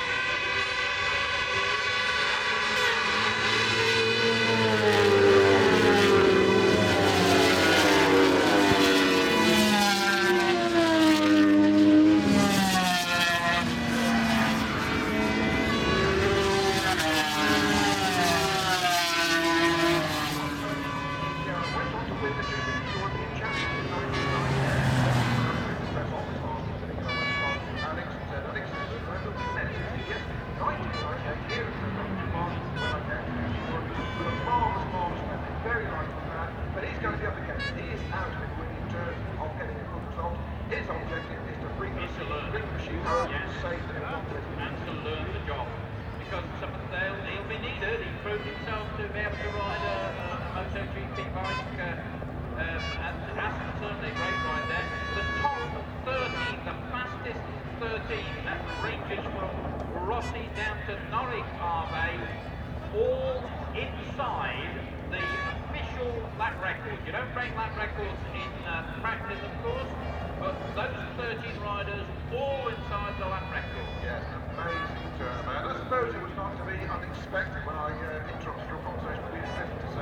Castle Donington, UK - British Motorcycle Grand Prix 2002 ... 500cc ...
500 cc motorcycle race ... part one ... Starkeys ... Donington Park ... the race and all associated noise ... Sony ECM 959 one point stereo mic to Sony Minidick
Derby, UK, 14 July 2002, 13:00